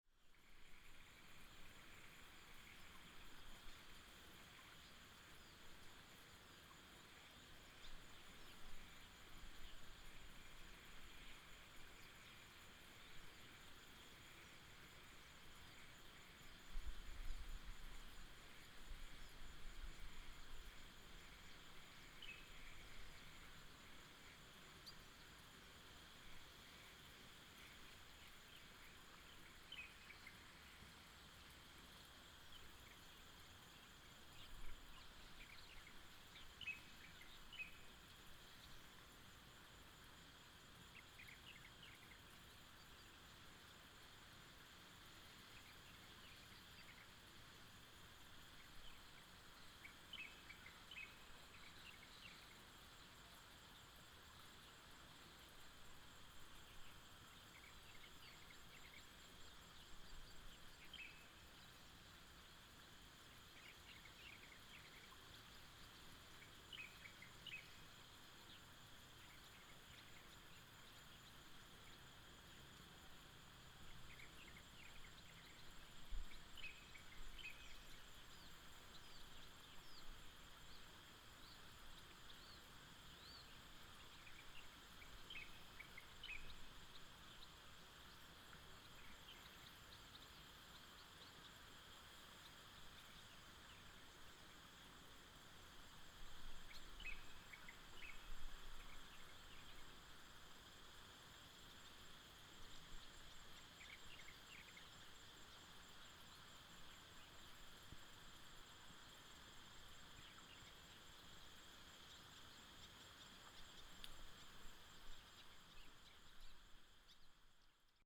新興橋, 紹雅產業道路 Daren Township - On the bridge
On the bridge, Bird sound, Stream sound
Binaural recordings, Sony PCM D100+ Soundman OKM II